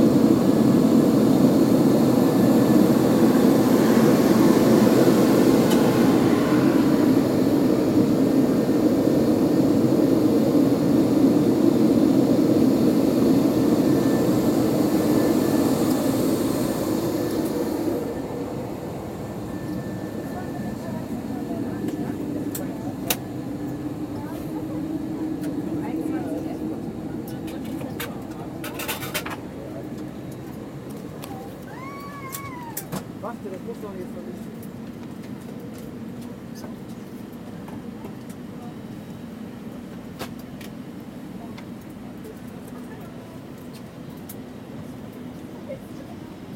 koeln airport, entering plane
recorded july 18, 2008.